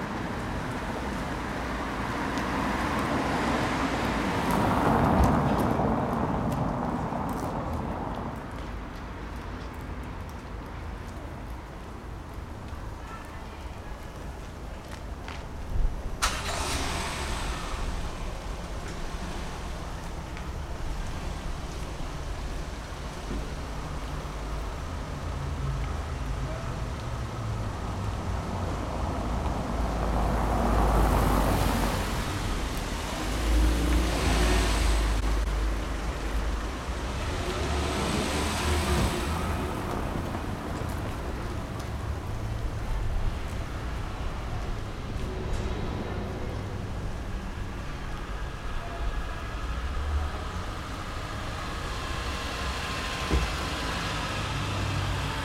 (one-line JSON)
{"title": "leipzig, nachbarschaftsschule in der gemeindeamtsstraße.", "date": "2011-08-31 17:40:00", "description": "vor der nachbarschaftsschule in der gemeindeamtsstraße. startende autos, passanten, schwatzende lehrerinnen.", "latitude": "51.34", "longitude": "12.33", "altitude": "112", "timezone": "Europe/Berlin"}